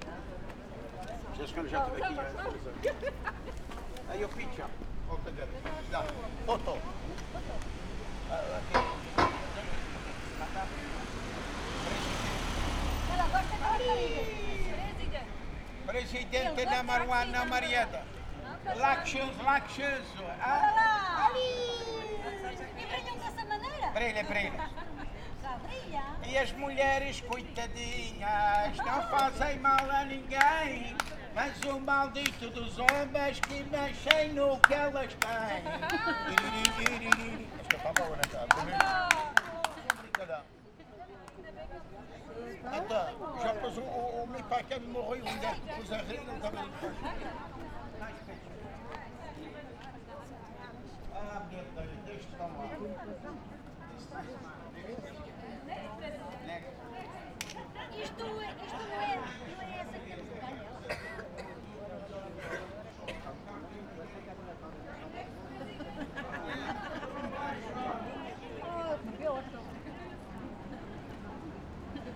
Porto Moniz, bus stop - taxi driver
taxi driver showing off the trunk of his car - decorated with pictures, badges, pendants and other stuff.
Porto Moniz, Portugal, 8 May 2015